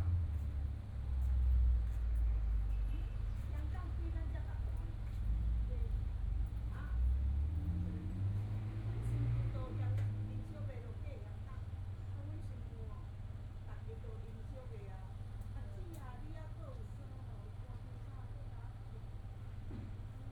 Zhongshan District, Taipei City - Chat between elderly
Chat between elderly, Traffic Sound, Motorcycle sound, Binaural recordings, Zoom H4n + Soundman OKM II